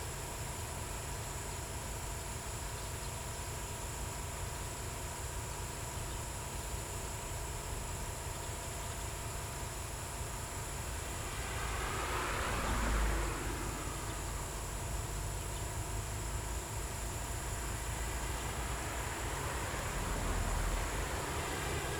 wastewater treatment plant, Marsaskala, Malta - sounds of purification devices
sounds from purification devices at Marsaskala wastewater plant. Highly uncomfortable place, it stinks, had to escape, so recordings are short. it's hard to imagine, that this plant serves more than a small local area. I've read that sewage is often passed-by due to malfunctions.
(SD702, DPA4060)